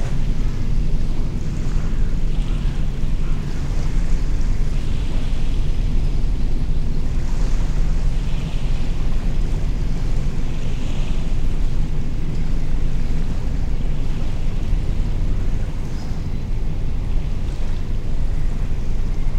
Am Molenkopf, Köln, Deutschland - ship upstream
heavy ship going slowly upstream